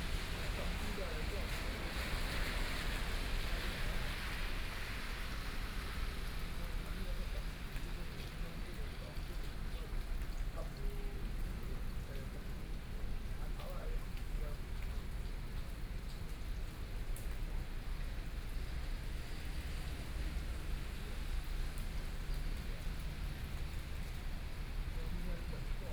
Yilan Station, Taiwan - Rainy Day
In the square in front of the station, Conversation between a taxi driver sound, Rainy Day, The traffic noise, Zoom H4n + Soundman OKM II